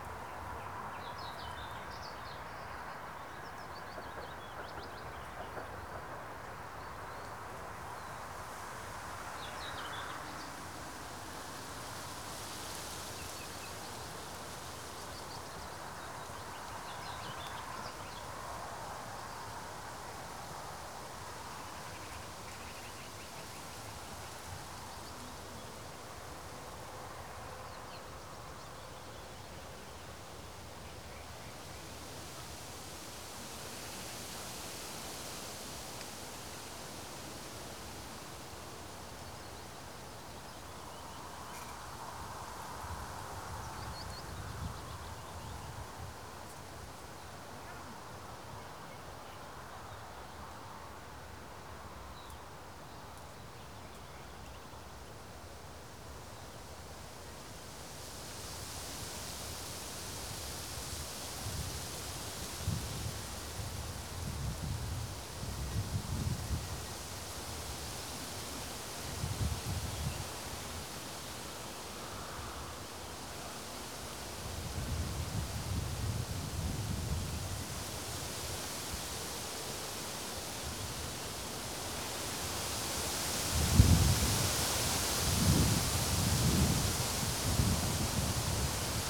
Morasko, Deszczowa roads - two trees
standing between two birches in rather athletic gusts of wind. thousands of tiny leaves spraying a blizzard of subtle noise and pulsating rustle. 120 degrees.